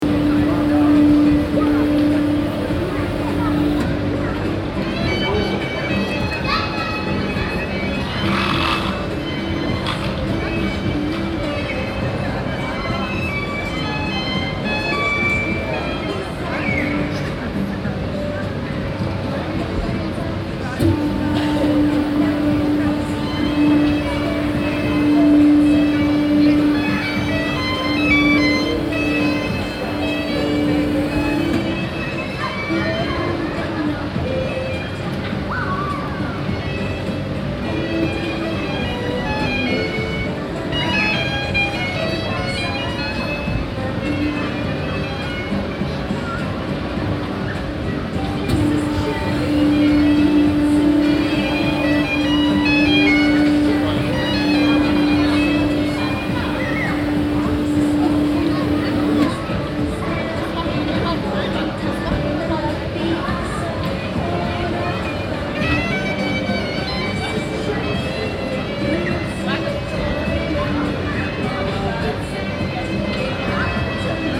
Stadtkern, Essen, Deutschland - essen, kettwiger str, children's merry-go-round
In der Fussgänger - Einkaufszone. Der Klang eines Kinderkarussels während der Ostermarkttage.
In the pedestrian - shopping zone. The sound of a children's merry-go-round during the easter market days.
Projekt - Stadtklang//: Hörorte - topographic field recordings and social ambiences
20 April 2014, 15:20